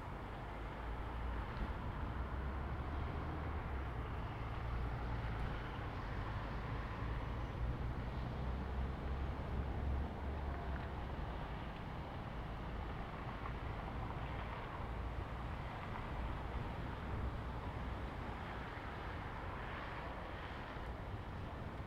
Drottning Christinas väg, Uppsala, Sweden - Uppsala Slott hill at night
A windy night on the hill in front of the Uppsala Castle. Cars driving below. Gravel sounds.
Recorded with Zoom H2n, 2ch stereo, deadcat on, held in hand.
15 February 2019